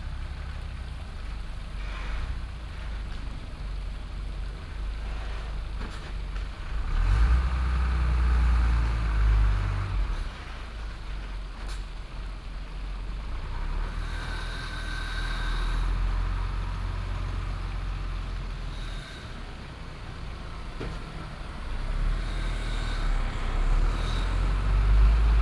鹿寮坑, Luliaokeng, Qionglin Township - at the stream side
At the stream side, Traffic sound, truck, Construction sound, Binaural recordings, Sony PCM D100+ Soundman OKM II
Qionglin Township, 竹26鄉道177號, September 2017